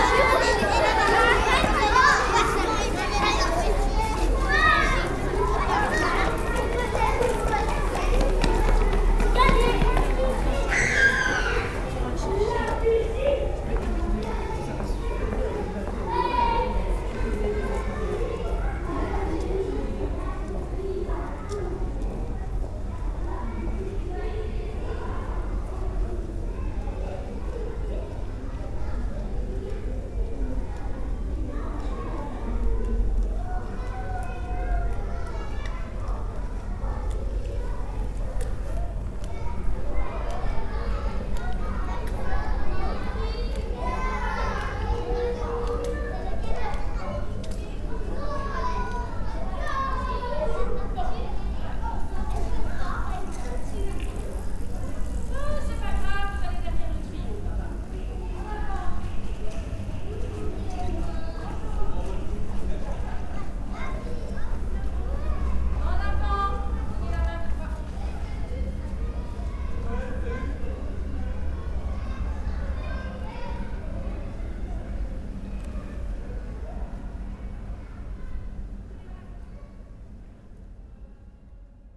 L'Hocaille, Ottignies-Louvain-la-Neuve, Belgique - Going to the swimming pool
A second group of children is arriving in the street and they walk to the swimming pool.